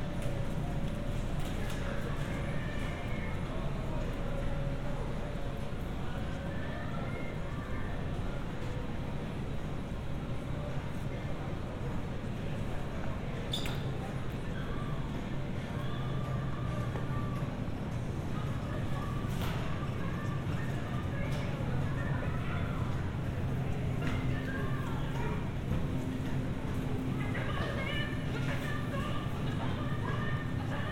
Cumberland Pkwy SE, Atlanta, GA, USA - Shopping At The Supermarket
A quick round of shopping at the local Publix with a family member. Here you can listen to all the typical store sounds: barcode scanners beeping, shopping carts, some faint music in the background, etc. The store was less busy than usual because of the time of night and people were remaining socially distanced. This was recorded with a pair of Roland CS-10EM binaural earbuds connected to the Tascam DR-100mkiii, which I kept in my sweatshirt pocket. User interference was kept to a minimum, although a few breathing/mouth sounds may have come through in parts of the recording due to the mics being mounted directly to my head.
Georgia, United States, 21 December 2020